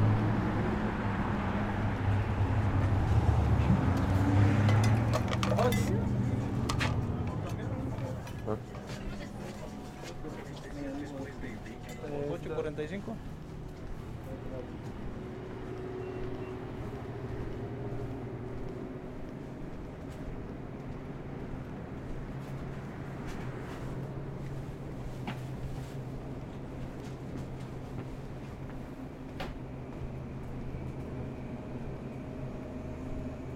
Broadway, Newburgh, NY, USA - Paulitas Grocery
Buying green peppers and red jalapenos at the grocery store. Zoom F1 w/ XYH-6 stereo mic
New York, United States, 29 January 2021